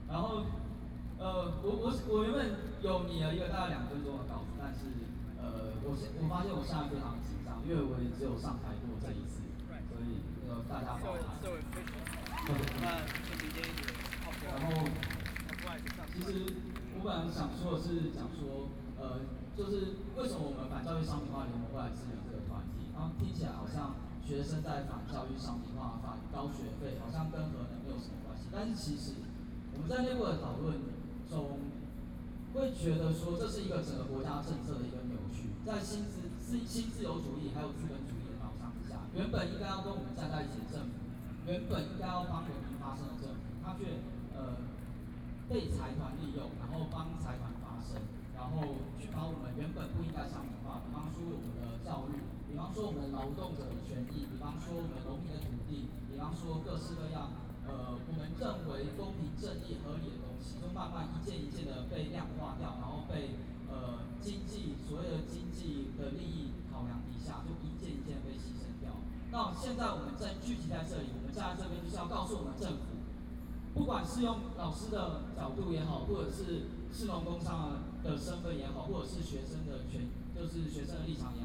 Taipei, Taiwan - Anti-Nuclear Power
Different social movement groups speech, Anti-Nuclear Power, Zoom H4n+ Soundman OKM II